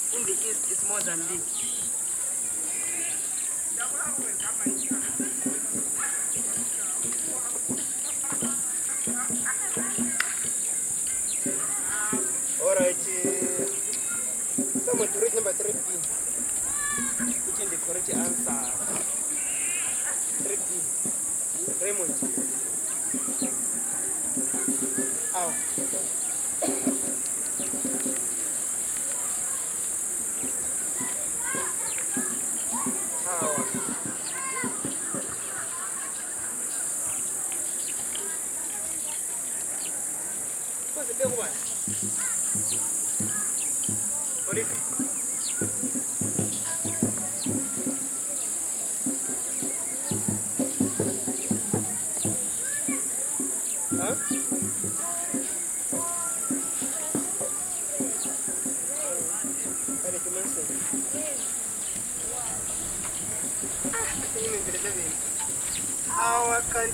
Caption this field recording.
…we are on the grounds of Siachilaba Primary School in the Binga district of the Zambezi Valley… listening to a geography class under a tree…